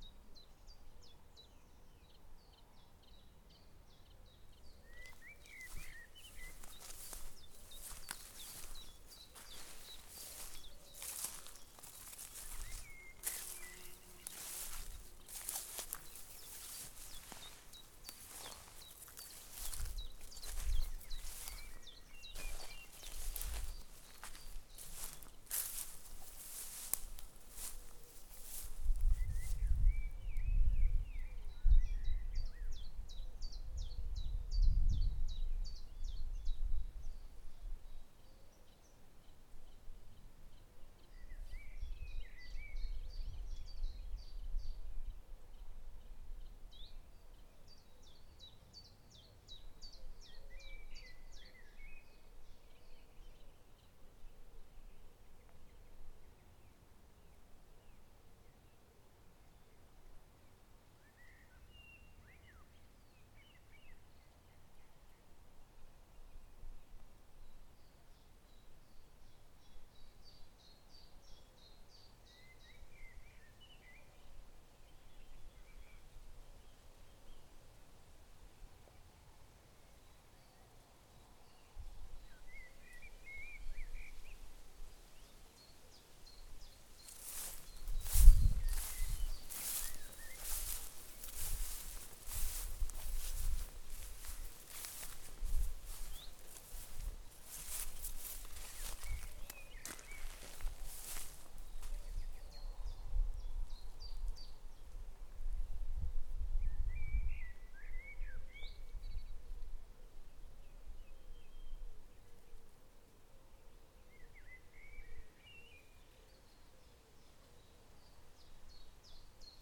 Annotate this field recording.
Walking though dry grass to listen to the birds and the stillness of a sunny June day, with a light breeze during Lockdown in Norfolk in the UK. Recording made by sound artist Ali Houiellebecq.